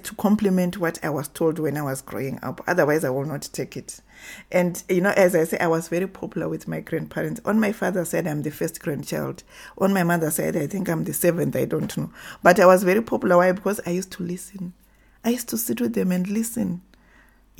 Joyce Makwenda's Office, Sentosa, Harare, Zimbabwe - Joyce Makwenda listens to history…
...Towards the end of the interview, she poignantly says, “it’s good we are part of a global culture and what not; but what do we bring to that global village…?”
Find the complete recording with Joyce Makwenda here:
Joyce Jenje Makwenda is a writer, filmmaker, researcher, lecturer and women’s rights activist; known for her book, film and TV series “Zimbabwe Township Music”.